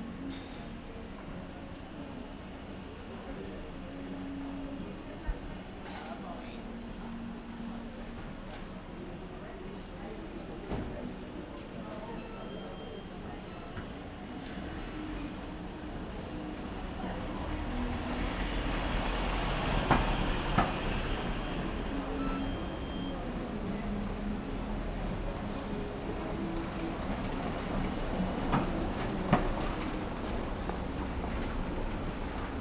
Guimarães, Largo da Oliveira - trashing bottles at Largo da Oliveira
abmience around one of the squares in the old part of Guimarães. people talking at tables, restaurant worker throwing out the trash, bells of the churches, homeless person shouting at tourists, old motorcycle passing by